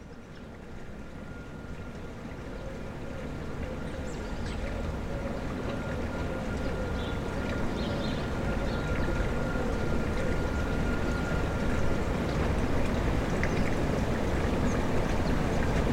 Rue Charles Marionneau, Bordeaux, France - The brutalist showpiece 01
Mériadeck is the “post-apocalyptic” concrete district of Bordeaux.
It was built in the 1960’s, wiping out a former working-class neighborhood that had become unhealthy.
It is part of the major urban renewal programs carried out after the Second World War in France that embraced the concept of urban planning on raised concrete slabs from the 1950s